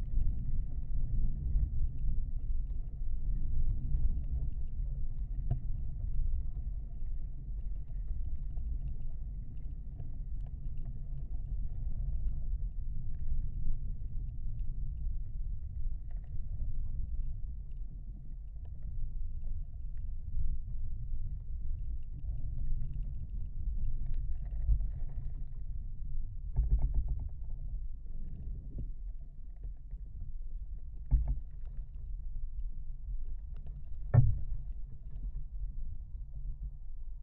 another German WWI fortification bunker. some half fallen trees over it. contact microphone recording
Utenos apskritis, Lietuva, 29 February 2020, 12:30